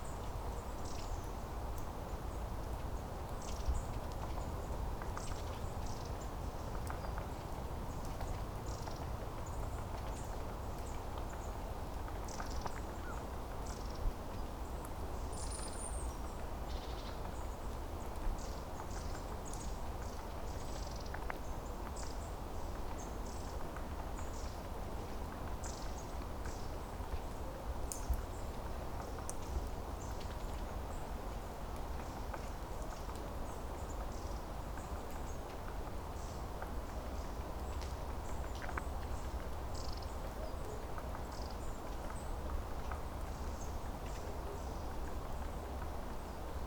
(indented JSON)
{
  "title": "Strzeszynskie Lake, Poznan outskirts - forest ambience",
  "date": "2012-12-16 13:20:00",
  "latitude": "52.46",
  "longitude": "16.82",
  "altitude": "85",
  "timezone": "Europe/Warsaw"
}